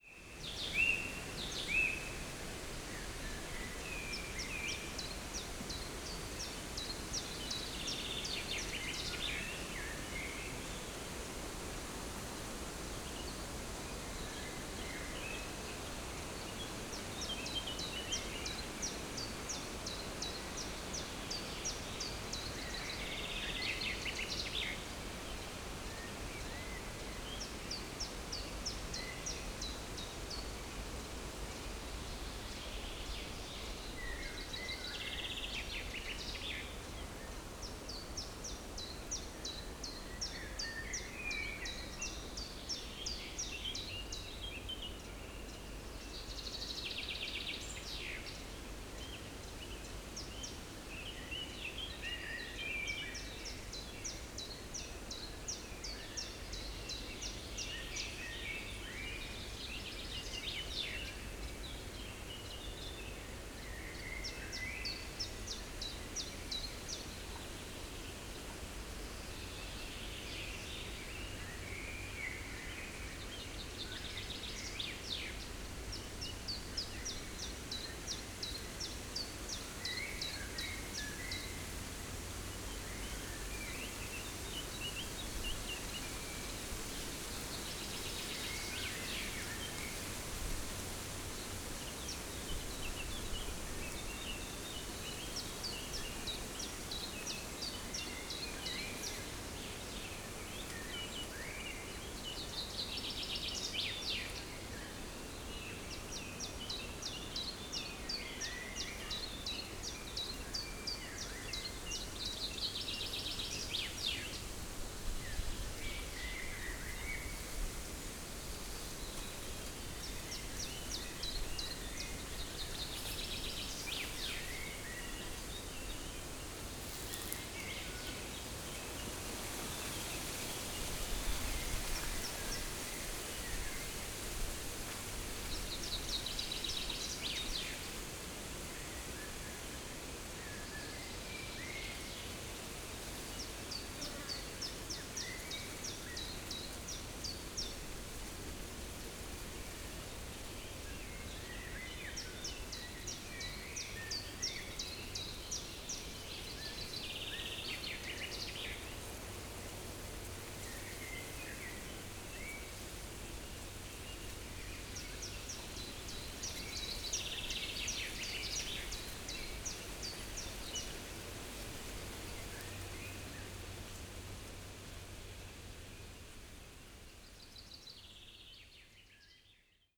Bredereiche, Fürstenberg/Havel, Deutschland - forest ambience
forest ambience near small lake Schulzensee
(Sony PCM D50, DPA4060)